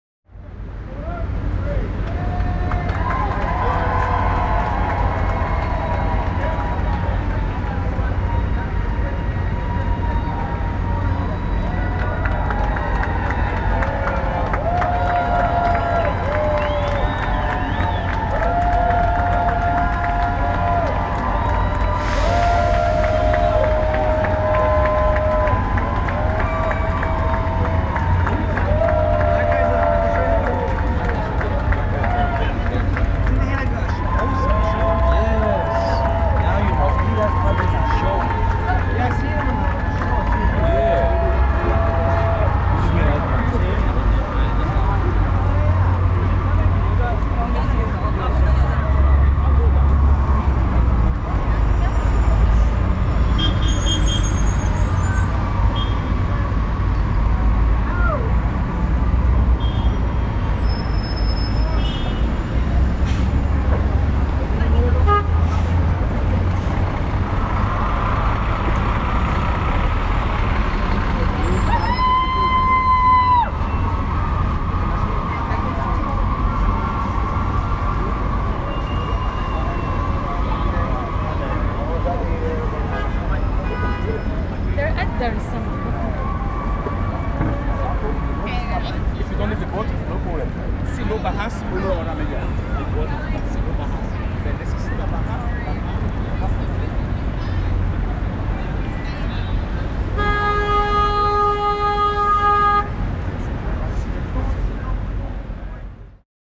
Crowds and Traffic - 7th. Avenue, New York, NY, USA
Crowds cheering and traffic on 7th Avenue. Recorded with a Mix Pre 3 and 2 Beyer lavaliers.